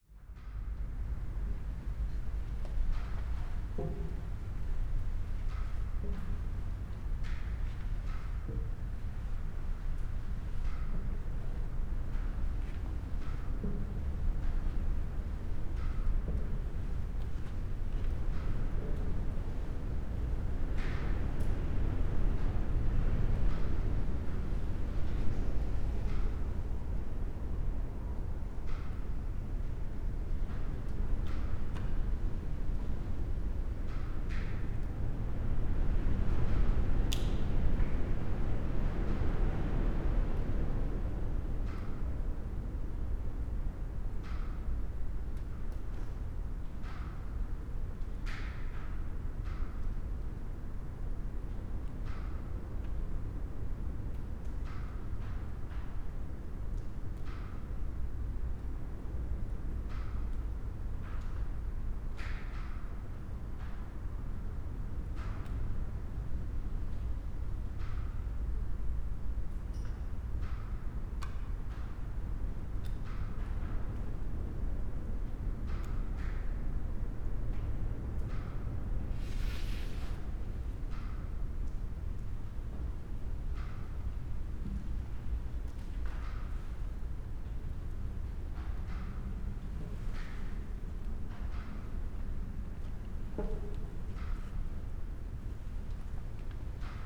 Punto Franco Nord, house, Trieste, Italy - metal stairs
walking the stairs on the ground floor of abandoned house number 25 in old harbor of Trieste, drops and winds through endless crevices